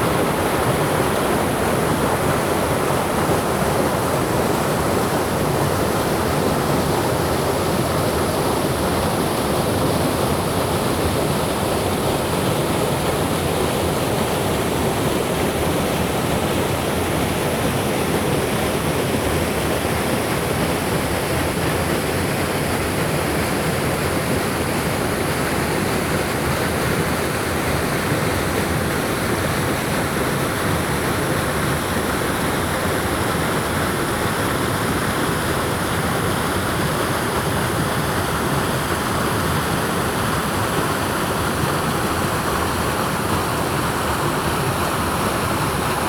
玉門關, 種瓜坑, Puli Township - sound of the river
The sound of the river
Zoom H2n MS+XY +Spatial audio
Nantou County, Taiwan, 27 July 2016